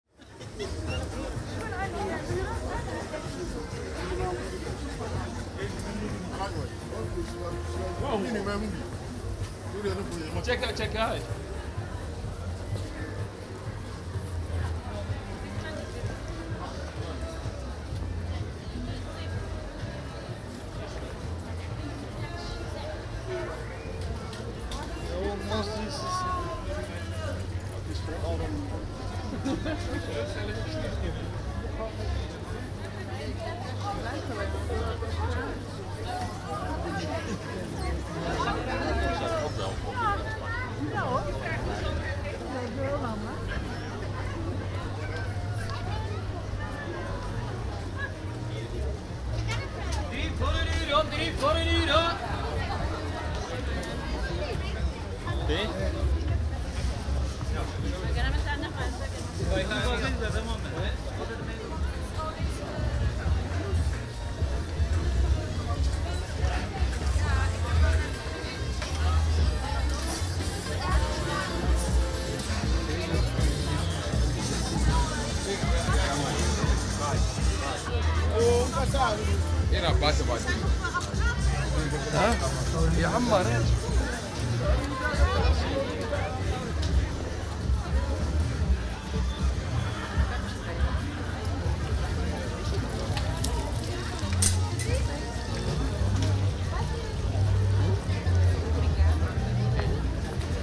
The annual Dutch celebration of Koningsdag (Kings day) with markets, fair and many different events. Recorded with a Zoom H2 with binaural mics.